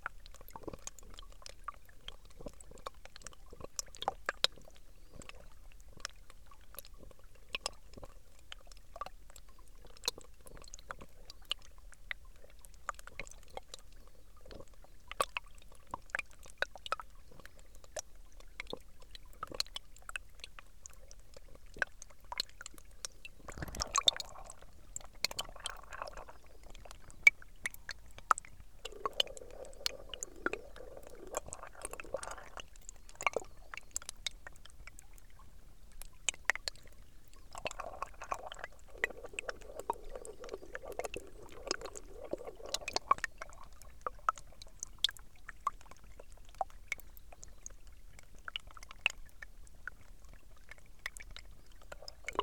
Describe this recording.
Frozen stramlet. First part of the track is recorded with small omni mics, second part - geophone placed on ice